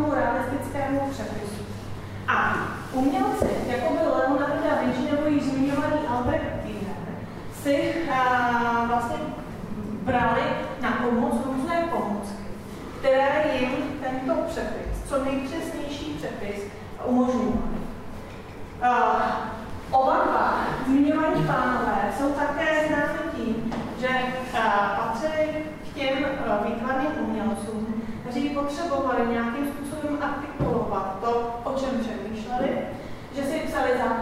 Pasteurova, Ústí nad Labem-Ústí nad Labem-město, Česko - Lecture History of Photograph No.1
Lecture. History of photograph_ No.1 Room 420